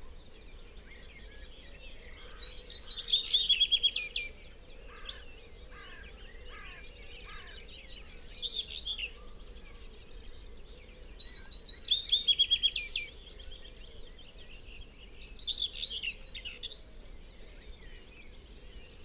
Green Ln, Malton, UK - willow warbler song soundscape ...
willow warbler song soundscape ... dpa 4060s to Zoom F6 ... mics clipped to twigs ... bird calls ... song ... from ... linnet ... great tit ... red -legged partridge ... pheasant ... yellowhammer ... whitethroat ... chaffinch ... blackbird ... wood pigeon ... crow ... some background noise ...